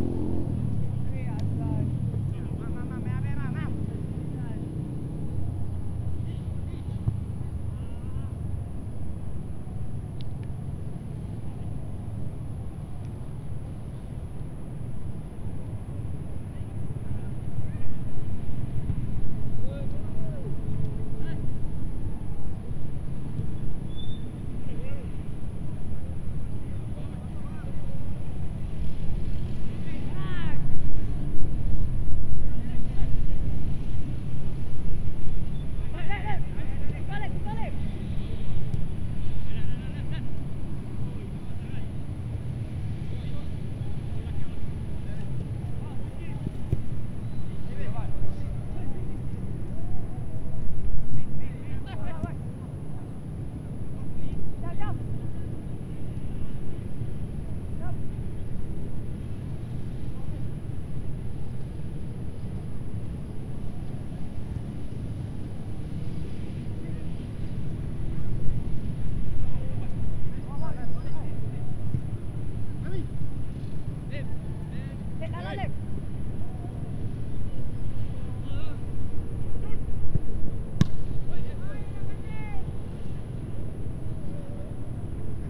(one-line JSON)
{"title": "Jalan Solok Pantai Peringgit, Melaka, Malaysia - Evening football", "date": "2017-11-12 18:30:00", "description": "It was a breezy Sunday and decided to watch a daily football match set by the players who live nearby. The house is just around and decided to just walk to the field. Also wanted to test the DIY windshield made out from socks. Not suitable for outdoor recordings but the football match is enjoyable to watch.", "latitude": "2.23", "longitude": "102.26", "altitude": "8", "timezone": "Asia/Kuala_Lumpur"}